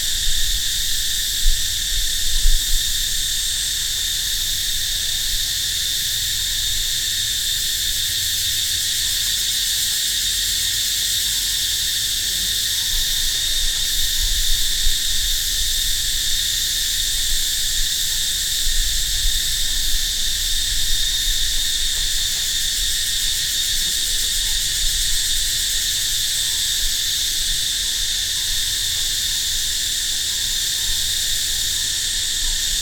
Angkor Thom, Preah Palilay
Crickets around the temple.